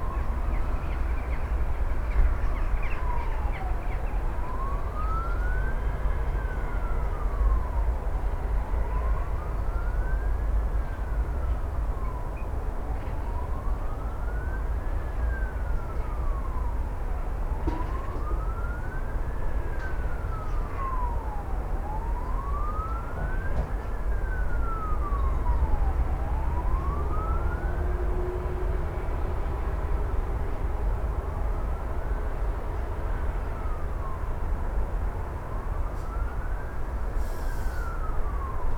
Teofila Mateckiego, balcony - fox chase
first 3 or so minutes - two male foxes chasing each other on a field, fighting over a female. at some point they got tired for a while and situation on the field got quiet. scared deer got back to nibbling dead leaves, wild hogs laid down. sound of the morning city, coffee making, usual traffic. (roland r-07)
województwo wielkopolskie, Polska, 18 January 2020, 09:05